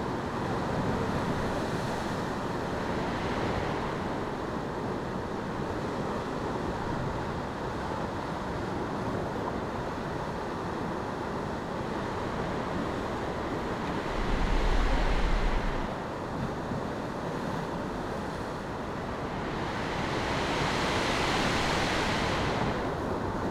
Funchal, hotel district, pier - crevice in the pier floor
recording of the insides of a hollow, concrete pier. microphones very close to a small opening in deteriorated concrete. the pulsing swish sound is made by air being pushed by the big waves through the small crack. muffled conversations.
Funchal, Portugal